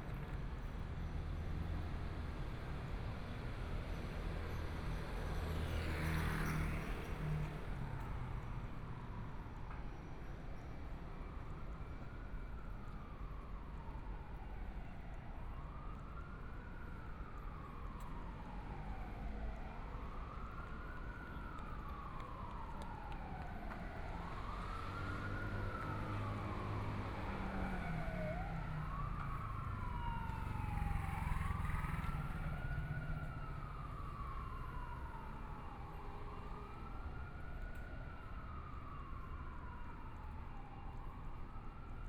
Tianxiang Rd., Taipei City - Small streets
walking in the Tianxiang Rd.Traffic Sound, Binaural recordings, Zoom H4n+ Soundman OKM II